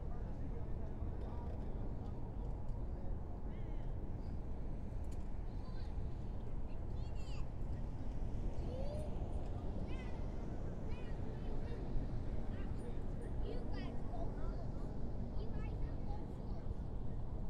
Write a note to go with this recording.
A recording made around the perimeter of an athletic field. Children can be heard at a nearby playground, and sounds from a baseball game in the opposite field carry over into the recording. Two people rode through the connecting street on all-terrain quads, causing a large spike in the recording level. My microphone placement wasn't as exact as I thought it was and most of the activity is heard from the left side, but this resulted in the unintentional effect of hearing the expansive reverb/echo present in this area in the right channel. [Tascam Dr-100mkiii w/ Primo EM-272 onmi mics]